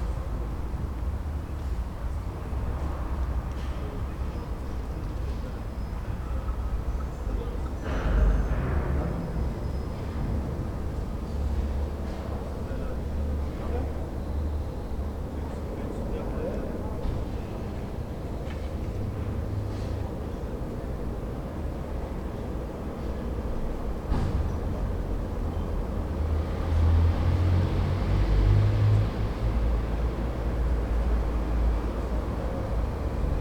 {"title": "Dresdener Str., Sebastianstr. - Eingang zum Untergrund / underground entrance", "date": "2009-08-22 18:00:00", "description": "microphone on the grate, pointing downwards, echo and reflections of street sounds, also catching sounds from below the ground, later wind and church bells, and two women came and told me about a sound art concert in the underground later. coincidences.", "latitude": "52.50", "longitude": "13.41", "altitude": "37", "timezone": "Europe/Berlin"}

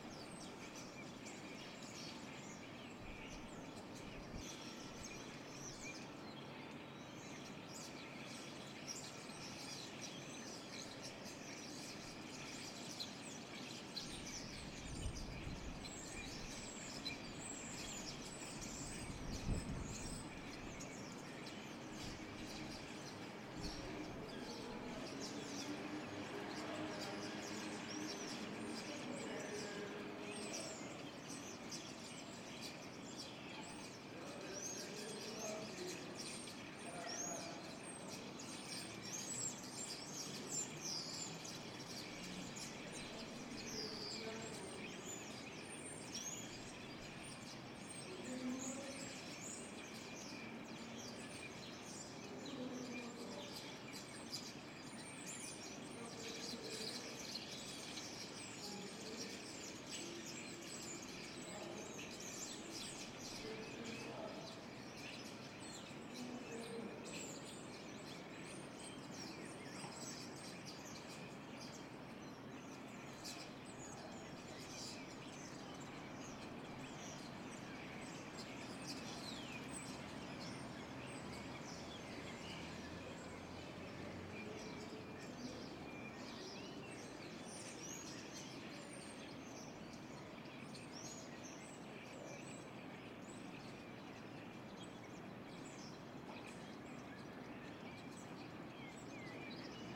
{"title": "Kortenbos, Den Haag, Nederland - Starlings gathering", "date": "2013-01-03 18:00:00", "description": "Large group of starlings gather in the trees.\nZoom H2 Internal mics.", "latitude": "52.08", "longitude": "4.31", "altitude": "7", "timezone": "Europe/Amsterdam"}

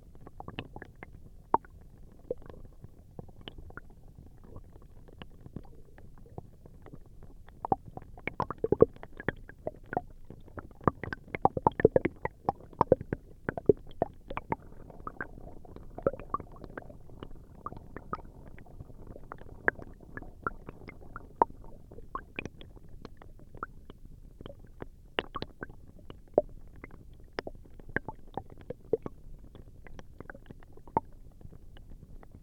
{"title": "Nolenai, Lithuania, frozen streamlet", "date": "2021-01-10 16:10:00", "description": "Frozen stramlet. First part of the track is recorded with small omni mics, second part - geophone placed on ice", "latitude": "55.56", "longitude": "25.60", "altitude": "137", "timezone": "Europe/Vilnius"}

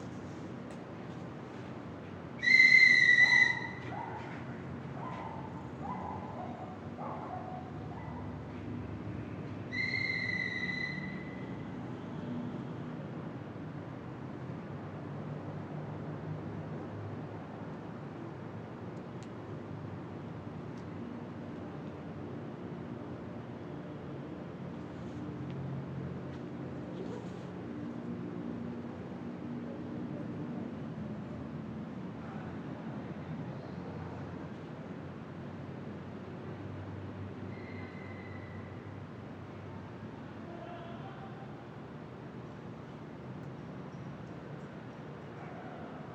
{"title": "a, Diagonal 39b Sur, Bogotá, Colombia - Park N ° 1 New Villa mayor", "date": "2021-05-16 21:00:00", "description": "Neighborhood Park No.1 New Villa mayor. We can hear the sound of the night city, very close by the neighborhood watchman who circulates through the neighborhood on a cycle with his whistle, we can hear some people talking very far away and a dog barking.", "latitude": "4.59", "longitude": "-74.13", "altitude": "2561", "timezone": "America/Bogota"}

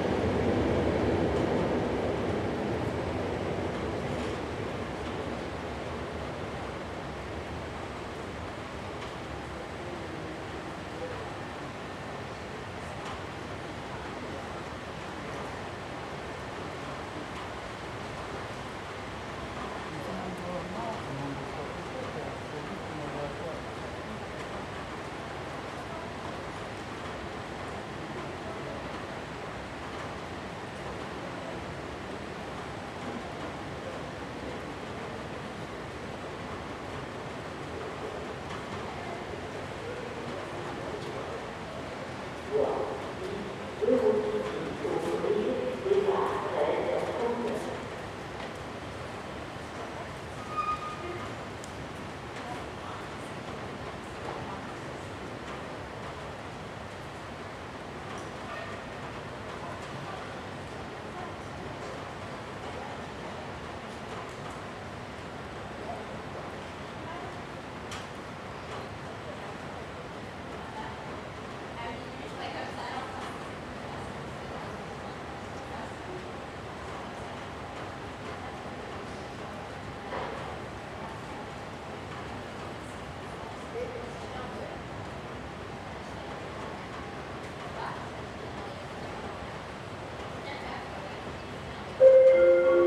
{"title": "Gare Cornavin, Quai, Place de Cornavin, Genève, Suisse - Cornavin Station", "date": "2021-01-28 13:43:00", "description": "Quai 1 de la Gare Cornavin. Période de semi confnement Covid19. On entend les voyageurs, l’escalateur, des femmes qui discutent en mangeant un sandwich, les annonces de la gare, un train qui entre en gare voie 1.\nPlatform 1 of the Cornavin train station. Covid19 semi-confinement period. We can hear travelers, the escalator, women chatting while eating a sandwich, announcements from the station, a train entering station track 1.\nRec Zoom H2n M+S - proceed.", "latitude": "46.21", "longitude": "6.14", "altitude": "390", "timezone": "Europe/Zurich"}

